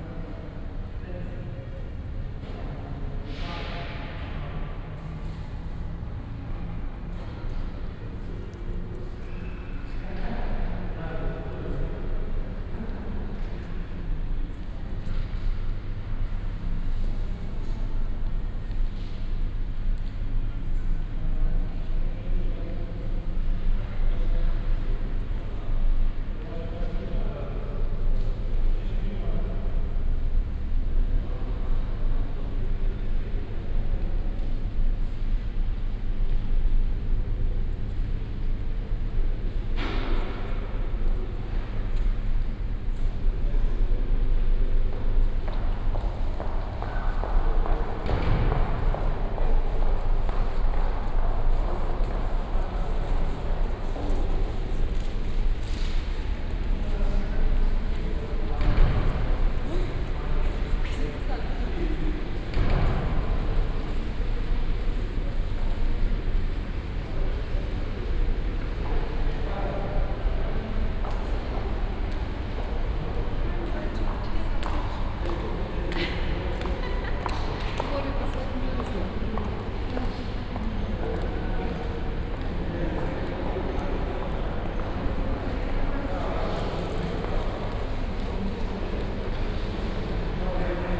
Maribor, station hall
Maribor, Slovenia, main station hall ambience and short walk out, binaural.
16 November 2011, ~16:00